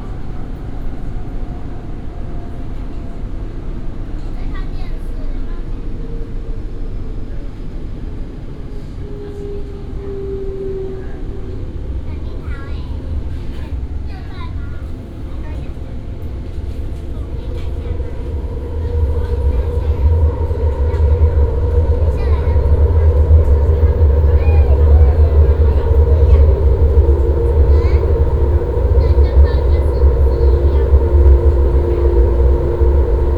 Guishan Dist., Taoyuan City - In the car
In the car, Taoyuan International Airport MRT, from National Taiwan Sport University Station to Chang Gung Memorial Hospital Station
Taoyuan City, Guishan District, 文化一路75號